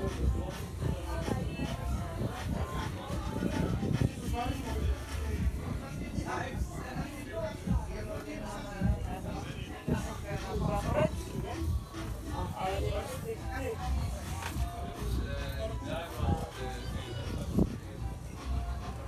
Herman Costerstraat, Den Haag, Nizozemsko - DE HAAGSE MARKT.
THE HAGUE MARKET. A GOOD START FOR A DAY IN THE HAGUE. Market, The Hague.
Zuid-Holland, Nederland, 6 April 2020